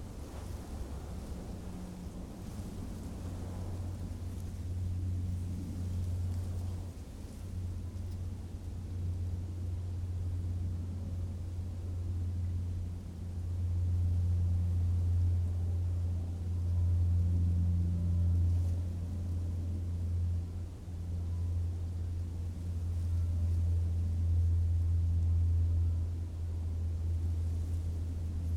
ambient recording from the top of Hill 88